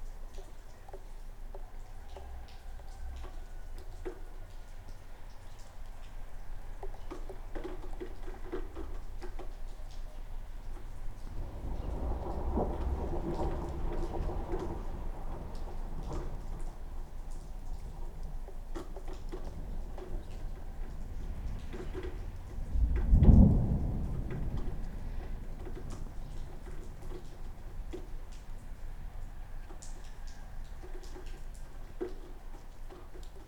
Kos, Greece, rain and thunder
11 April